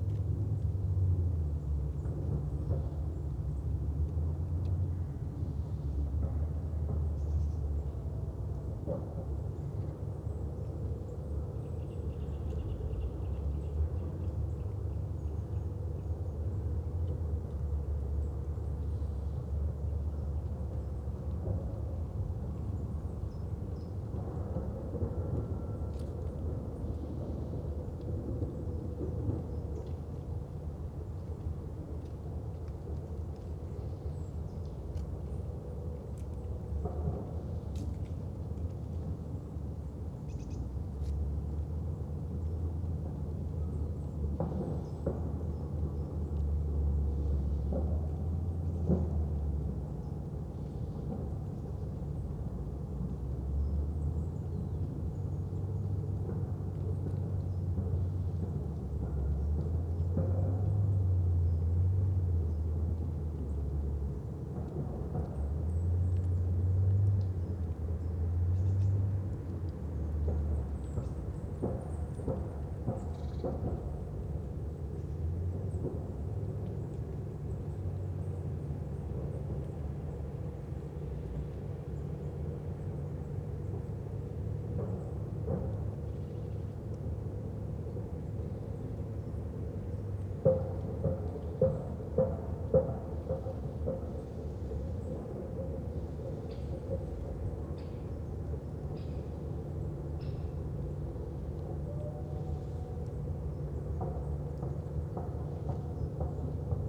Beselich Niedertiefenbach, Ton - forest ambience /w distant sounds of work
Saturday early afternoon at the pond, distant sounds of work and maybe traffic
(Sony PCM D50, Primo EM172)